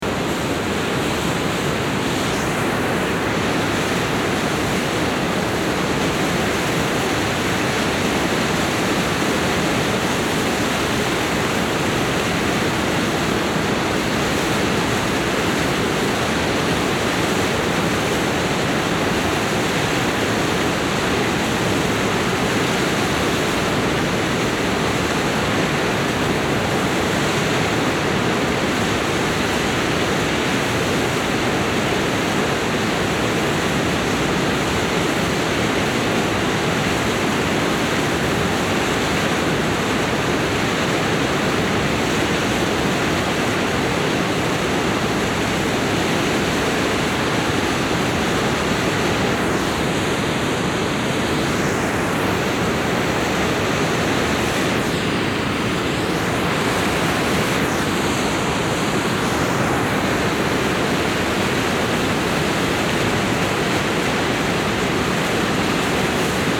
Auf dem Damm des Wasserkraftwerks des Ruhrverbandes. Das Rauschen des Wassers aus den Turbinen.
On the dam of the water power station. The sound of the water coming out of the turbines.
Projekt - Stadtklang//: Hörorte - topographic field recordings and social ambiences